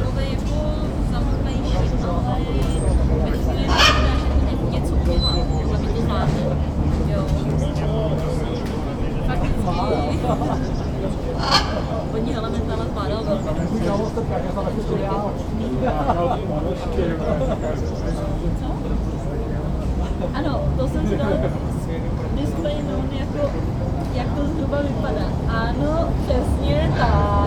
Botanicka zahrada, glasshouse
interieur of the glass house of the botanical garden and the buffet nearby